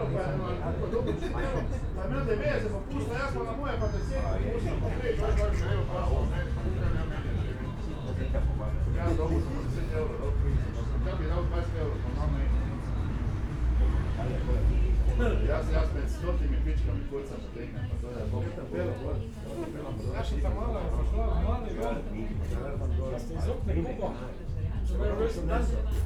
Maribor, Tezno, Lahova ulica - pub ambience
after hours on the bike, having a break at a pub in Tezno.